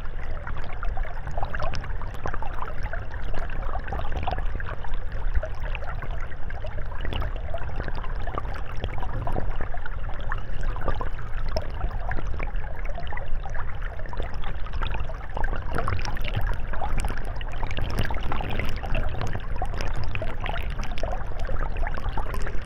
Recording of the river Orne, in a pastoral scenery.
Recorded underwater with a DIY hydrophone.